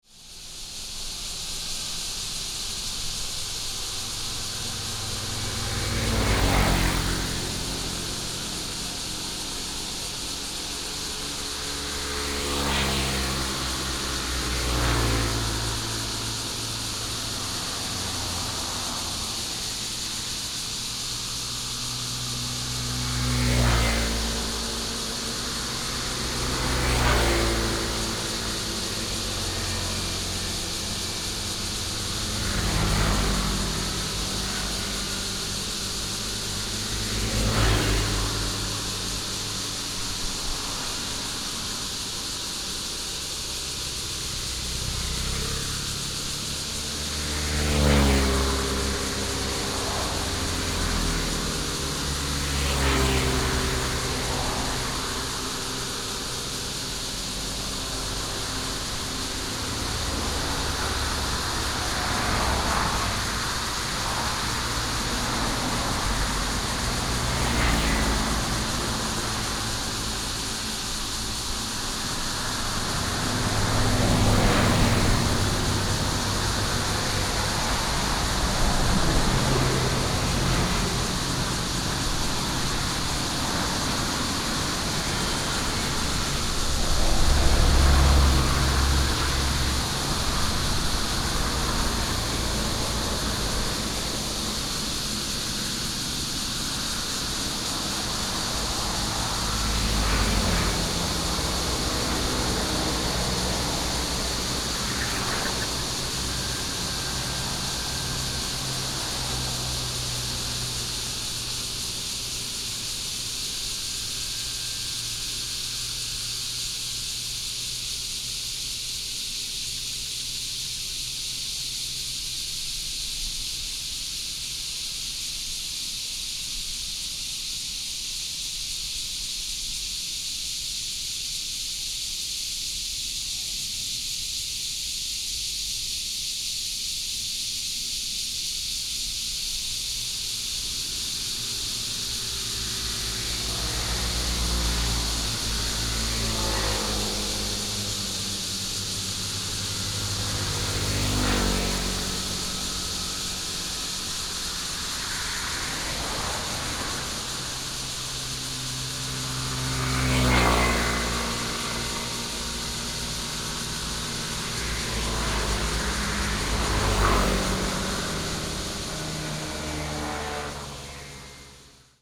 中山東路二段, Xinwu Dist., Taoyuan City - Cicada and traffic sound
Facing the woodsCicada cry, traffic sound